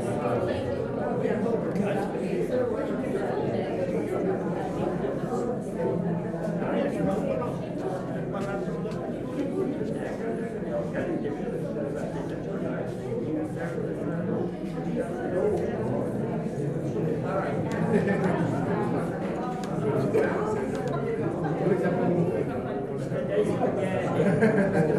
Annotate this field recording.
people waiting for the concert to begin